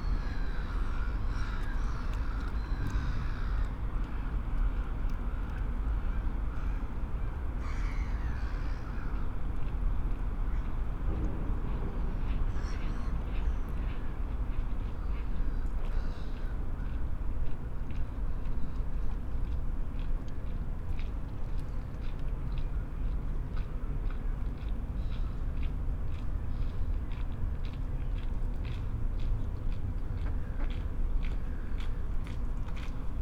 Haldenstrasse, Luzern, Schweiz - Seepromenade Vierwaldstättersee Luzern
Sunday Morning, Vierwaldstättersee Promenade
Schweiz/Suisse/Svizzera/Svizra